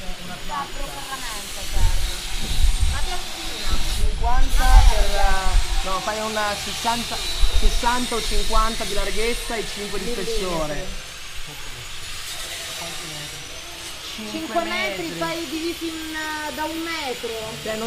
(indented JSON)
{
  "title": "Taranto, città vecchia, costruzione park Urka di LABuat",
  "latitude": "40.48",
  "longitude": "17.23",
  "altitude": "15",
  "timezone": "Europe/Berlin"
}